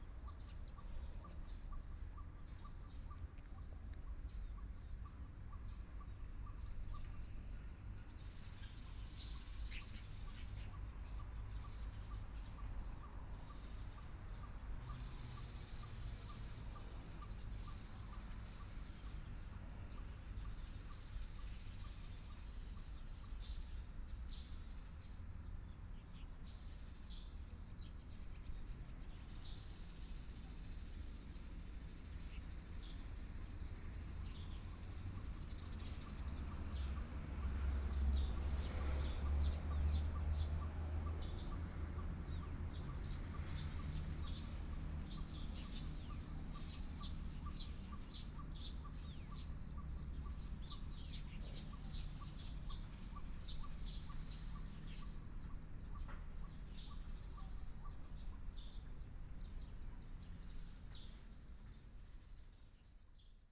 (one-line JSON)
{"title": "慶安廟, 宜蘭市黎明里 - In the temple plaza", "date": "2014-07-26 11:47:00", "description": "In the temple plaza, Traffic Sound, Birds\nSony PCM D50+ Soundman OKM II", "latitude": "24.74", "longitude": "121.77", "altitude": "7", "timezone": "Asia/Taipei"}